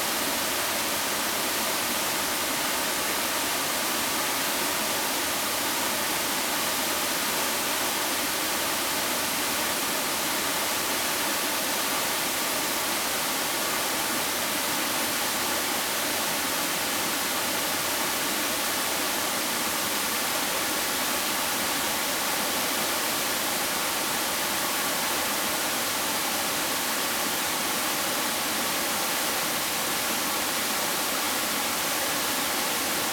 觀音瀑布, 埔里鎮 Nantou County - waterfalls
waterfalls
Zoom H2n MS+ XY